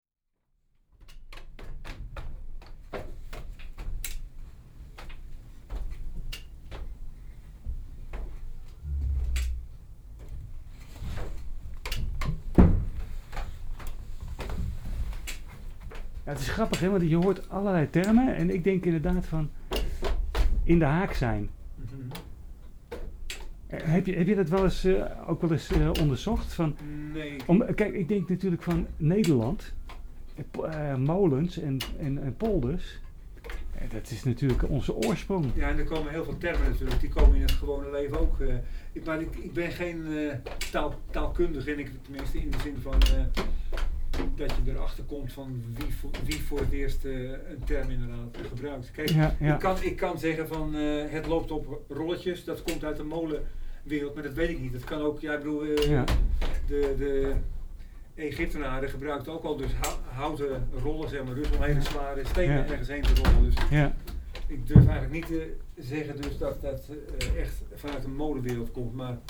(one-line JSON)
{"title": "naar boven onder de molenkap zonder te malen - over molentermen als zwichten", "date": "2011-07-09 14:55:00", "description": "molentermen in de nederlandse taal", "latitude": "52.15", "longitude": "4.44", "altitude": "1", "timezone": "Europe/Amsterdam"}